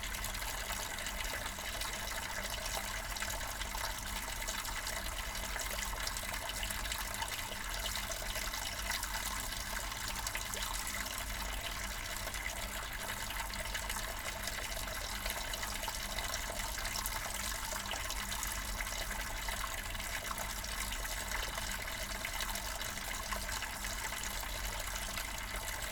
bad freienwalde/oder: kurfürstenquelle - the city, the country & me: fountain
fountain
the city, the country & me: december 31, 2014